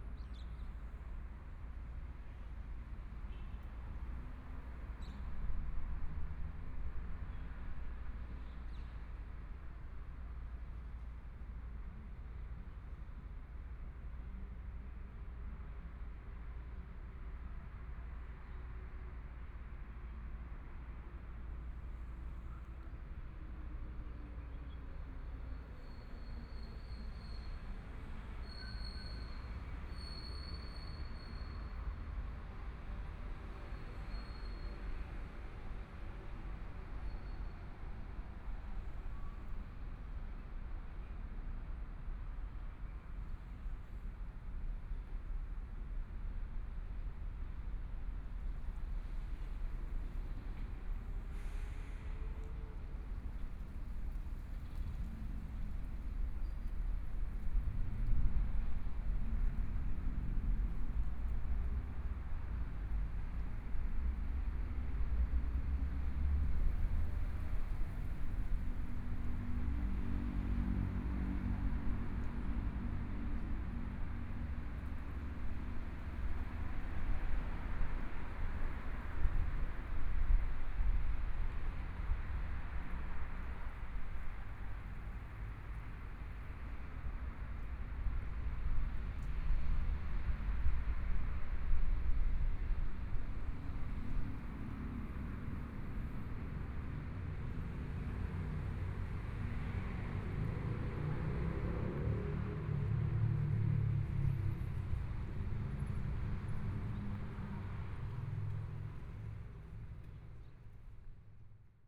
Zhiben, Taitung City - Environmental sounds
Traffic Sound, Town, Plaza in front of the temple, Environmental sounds, Binaural recordings, Zoom H4n+ Soundman OKM II ( SoundMap20140117- 3)
Taitung County, Taiwan